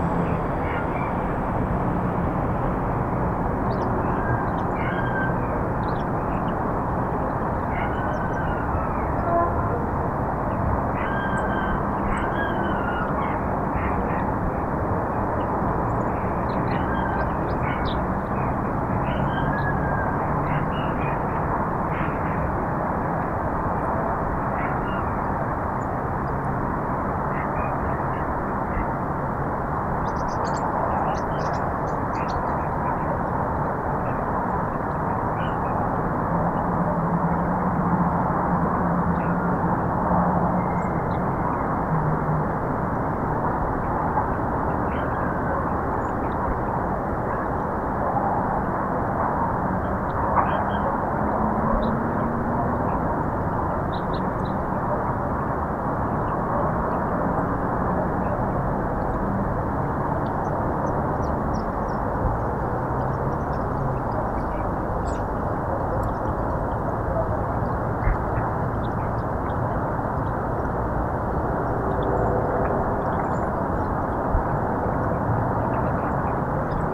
вулиця Ємельянова, Костянтинівка, Донецька область, Украина - Звуки у реки
Морозная свежесть и звуки атмосферы на берегу реки в промышленной зоне
Kostiantynivka, Donetska oblast, Ukraine, December 2018